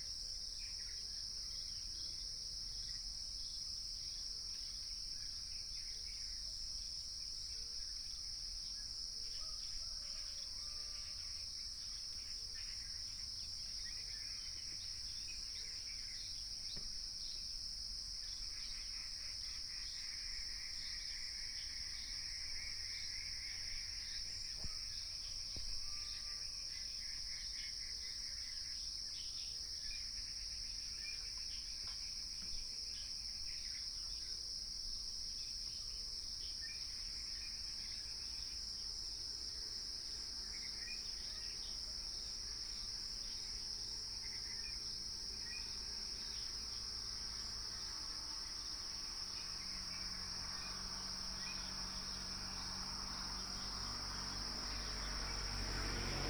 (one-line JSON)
{"title": "景山橋, Zhuolan Township - Birds and Cicadas", "date": "2017-09-19 06:20:00", "description": "Birds and Cicadas, Near the reservoir, A variety of bird sounds, Binaural recordings, Sony PCM D100+ Soundman OKM II", "latitude": "24.34", "longitude": "120.83", "altitude": "311", "timezone": "Asia/Taipei"}